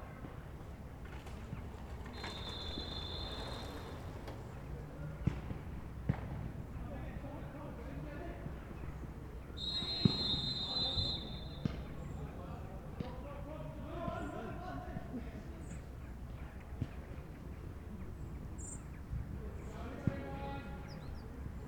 columbiadamm: fußballplatz - the city, the country & me: football ground
Berlin, Germany, 13 December 2009